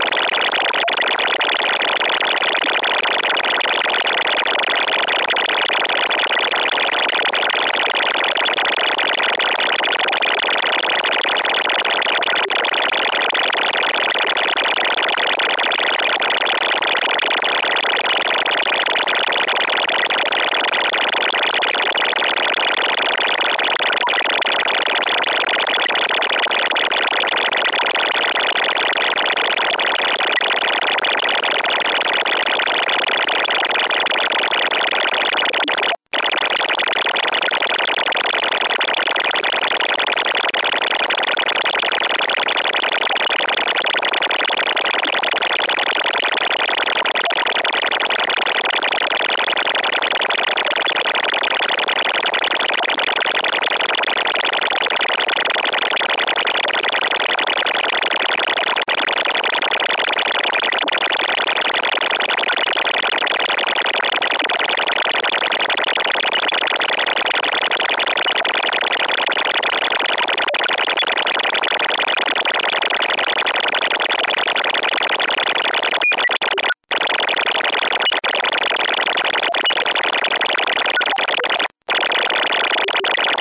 Maintenon, France - Automated teller machine
Electromagnetic field song of a Automated teller machine, recorded with a telephone pickup coil.
28 December 2017, 09:00